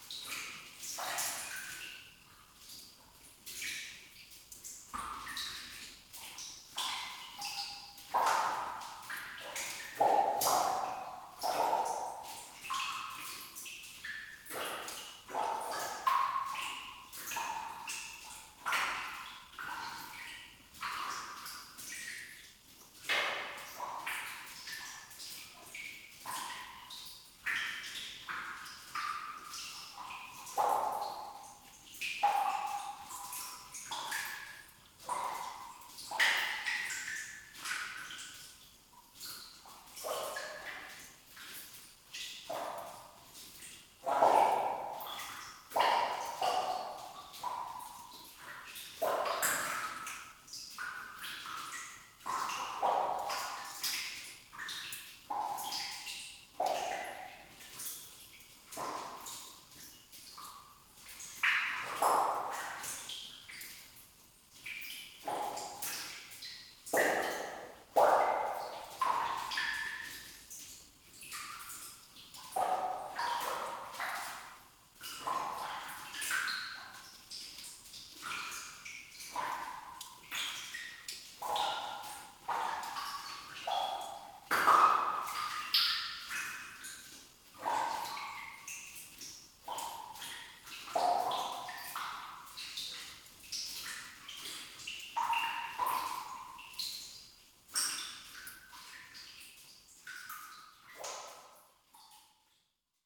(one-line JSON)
{"title": "Aumetz, France - A dam into the mine", "date": "2016-03-20 10:15:00", "description": "In an abandoned iron underground mine, sounds of the drops falling into a gigantic water pool.", "latitude": "49.43", "longitude": "5.95", "altitude": "398", "timezone": "Europe/Paris"}